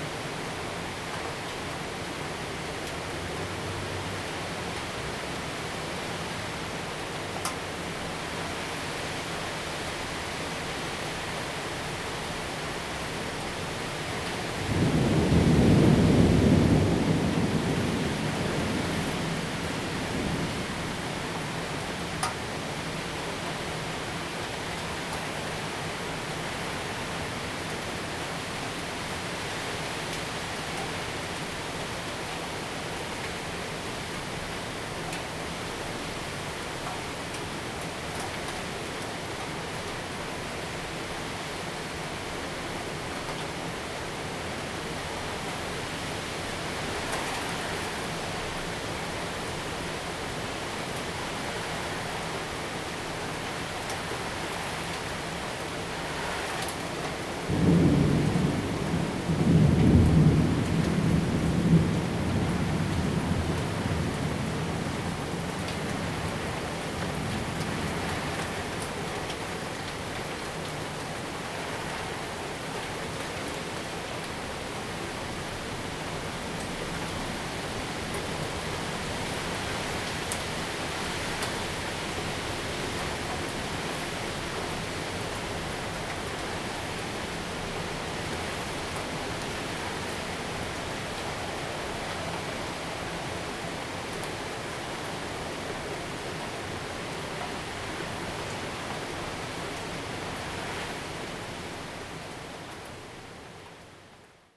Rende 2nd Rd., 桃園市八德區 - Thunderstorm
Thunderstorm, rain, Traffic sound
Zoom H2n MS+XY